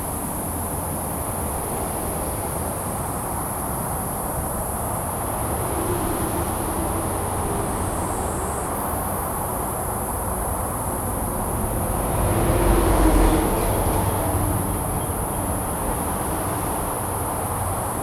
{
  "title": "中山高速公路, Hukou Township - Insects and traffic sound",
  "date": "2017-08-12 17:13:00",
  "description": "Insects, Traffic sound, Next to the highway, Zoom H2n MS+XY",
  "latitude": "24.88",
  "longitude": "121.06",
  "altitude": "127",
  "timezone": "Asia/Taipei"
}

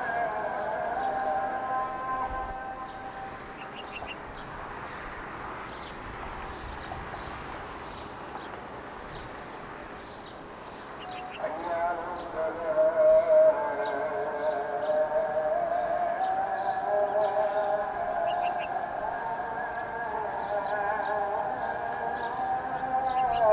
{
  "title": "loading... - Muazin at Isawiya",
  "date": "2018-04-30 12:35:00",
  "description": "Muazin at Isawiya",
  "latitude": "31.79",
  "longitude": "35.25",
  "altitude": "806",
  "timezone": "Asia/Jerusalem"
}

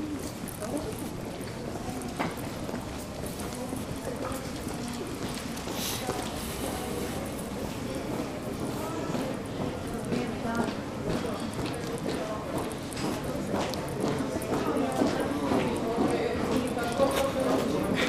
{
  "title": "Široka ul., Zadar, Croatia - Kalelarga",
  "date": "2020-02-05 21:17:00",
  "description": "the main street in the old part of town ... the truck takes out the garbage cans ... the street player plays the accordion ...",
  "latitude": "44.11",
  "longitude": "15.23",
  "altitude": "6",
  "timezone": "Europe/Zagreb"
}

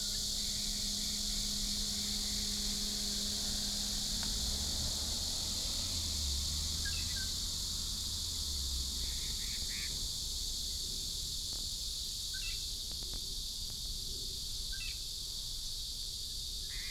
{
  "title": "Zhonglu, Bade Dist., Taoyuan City - Birds and Cicadas",
  "date": "2017-07-05 17:14:00",
  "description": "Birds and Cicadas, traffic sound",
  "latitude": "24.96",
  "longitude": "121.29",
  "altitude": "114",
  "timezone": "Asia/Taipei"
}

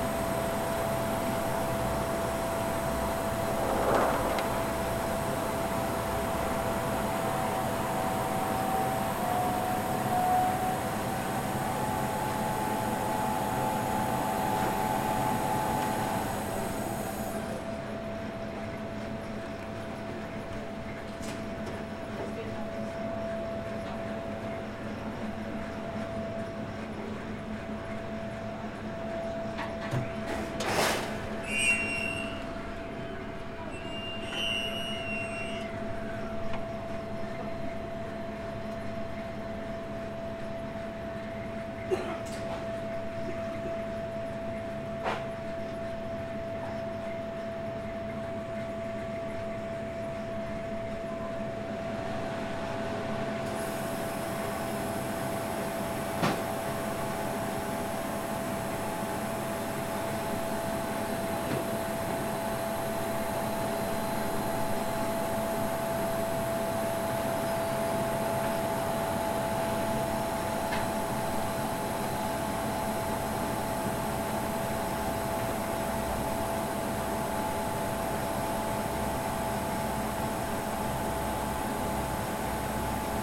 {
  "title": "Williams Press, Maidenhead, Windsor and Maidenhead, UK - Litho plates being burned",
  "date": "2014-10-02 15:09:00",
  "description": "In this recording, the production manager at Williams Press - Mo - talks through how the Litho-plates are created for the Litho-printing process. She explains that there are four plates per 2-page spread in every book: one for each layer of ink. The sounds you can hear are mostly of the lasers inside the machine burning the impressions for each ink layer, but at the end there is a wondrous metallic sound of the freshly burned plates emerging from the machine with a slight wobble...",
  "latitude": "51.53",
  "longitude": "-0.73",
  "altitude": "30",
  "timezone": "Europe/London"
}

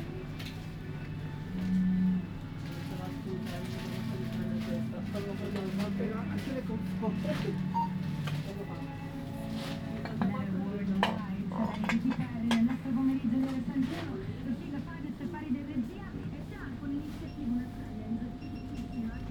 {
  "title": "Ascolto il tuo cuore, città. I listen to yout heart, city. Several chapters **SCROLL DOWN FOR ALL RECORDINGS** - Shopping afternoon in the time of COVID19 Soundwalk",
  "date": "2020-03-23 03:10:00",
  "description": "\"Shopping afternoon in the time of COVID19\" Soundwalk\nChapter XIX of Ascolto il tuo cuore, città. I listen to yout heart, city. Chapter XIX\nMonday March 23 2020. Short walk and shopping in the supermarket at Piazza Madama Cristina, district of San Salvario, Turin thirteen after emergency disposition due to the epidemic of COVID19.\nStart at 3:10 p.m., end at h. 3:48 p.m. duration of recording 38’00”''\nThe entire path is associated with a synchronized GPS track recorded in the (kml, gpx, kmz) files downloadable here:",
  "latitude": "45.06",
  "longitude": "7.68",
  "altitude": "246",
  "timezone": "Europe/Rome"
}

